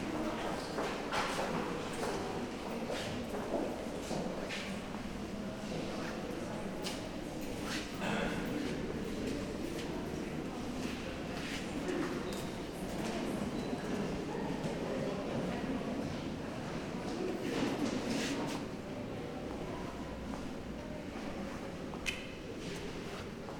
Colombarium sous-sol
Fête des Morts
Cimetière du Père Lachaise - Paris
Chambre funéraire du colombarium en sous-sol
Paris, France, 1 November, 14:41